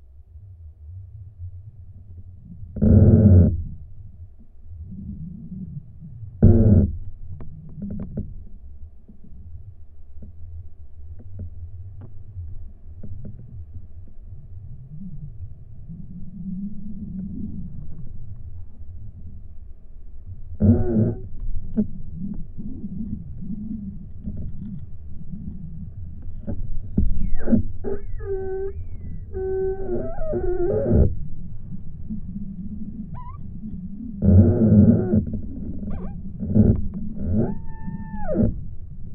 Jasonys, Lithuania, rubbing trees
windy day. the trees rubbing to each other. inside process recorded with LOM geophone and contact microphone